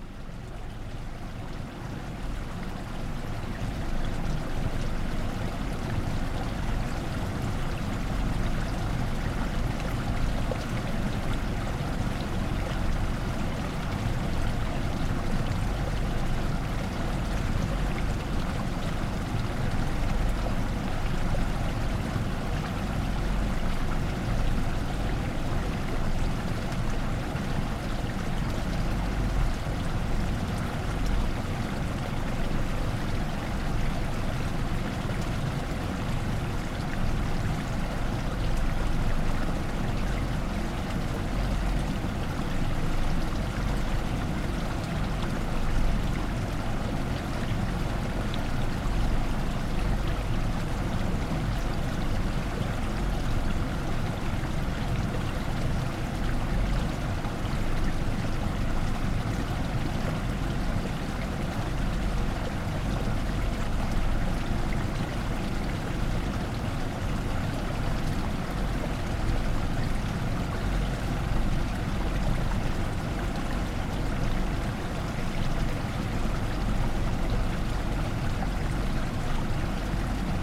Povilai, Lithuania, the flow
Water running from one pond to other
Šilutės rajono savivaldybė, Klaipėdos apskritis, Lietuva